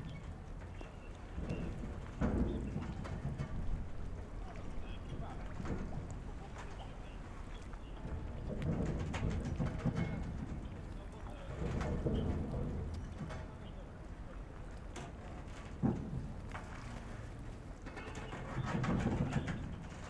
Oscillating metallic harbor for servicing boats that cross the canal to Tróia from Setúbal. Fisherman, voices, waves and radio nearby. Recorded with a Zoom H5 and XLS6 capsule.
Portugal, 24 July, 22:22